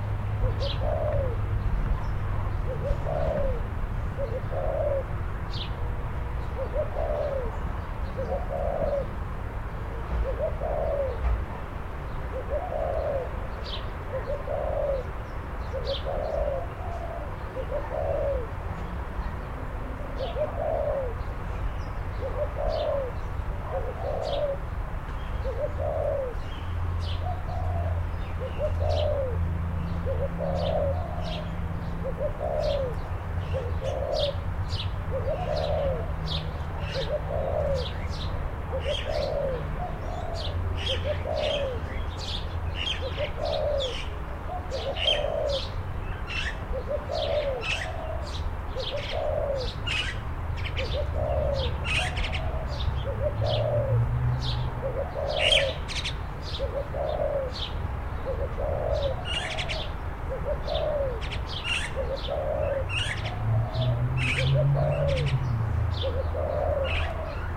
4 Ailsa st
8:00am, the soundscape in my backyard